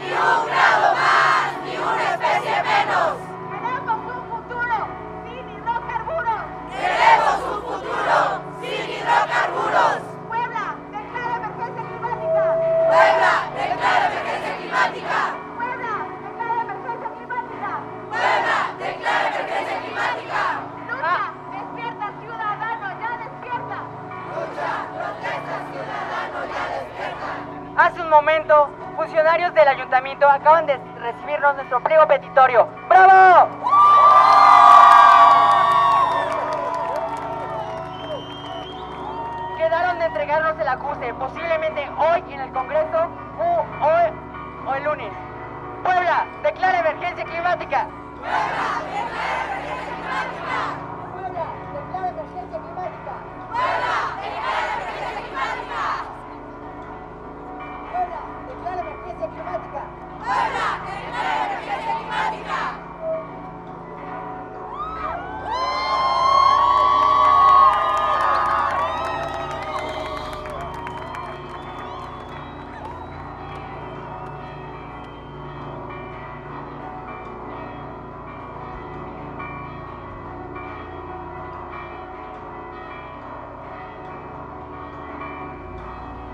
Puebla (Mexique)
Sur la place Central (El Zocalo) des étudiants manifestent pour le respect et la protection de la planète.
Puebla, México, 21 September 2019